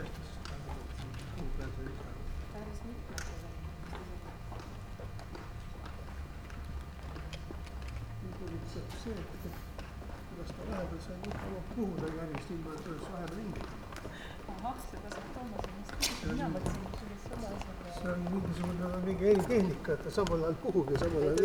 Tallinna Linnahall - inside on stage
tallinn, inside linnahall, on stage with the architect.